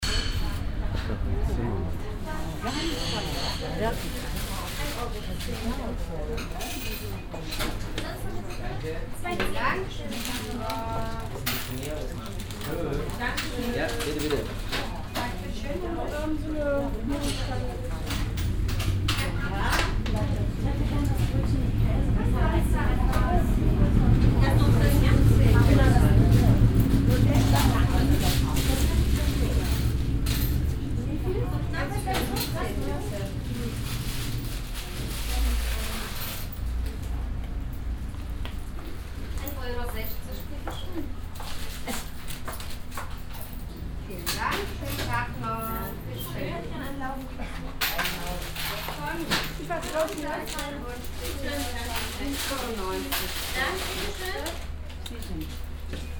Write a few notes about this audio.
mittags in der koelner traditions bäckerei, verkaufsgespräche, tütenknistern, soundmap nrw - social ambiences - sound in public spaces - in & outdoor nearfield recordings